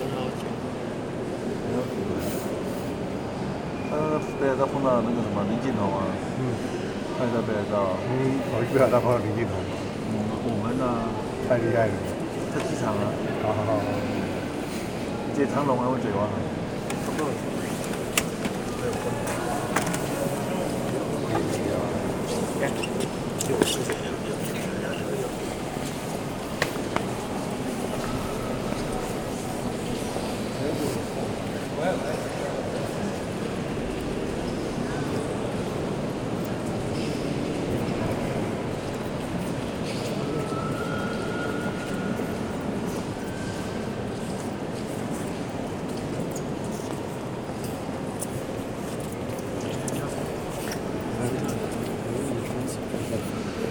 Chartres, France - Chartres cathedral
A quiet evening in the Chartres cathedral. Guided tour of Japanese tourists, speaking smoothly, and other tourists looking to the stained glass.